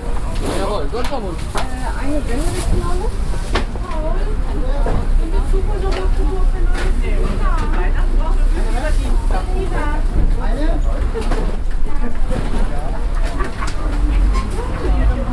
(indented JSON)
{
  "title": "Wochenmarkt, Hamm, Germany - Last Saturday Market before Christmas...",
  "date": "2014-12-20 12:43:00",
  "description": "… I’m strolling one of the last Saturday markets in town before Christmas… mingeling closely along the stalls… it’s a strong, icy wind around…\n…ein Marketbummel über den letzten Samstag's Wochenmarkt vor Weihnachten… mische mich unter die Leute an den Ständen… es geht ein eisiger, starker Wind über den Platz…",
  "latitude": "51.68",
  "longitude": "7.82",
  "altitude": "63",
  "timezone": "Europe/Berlin"
}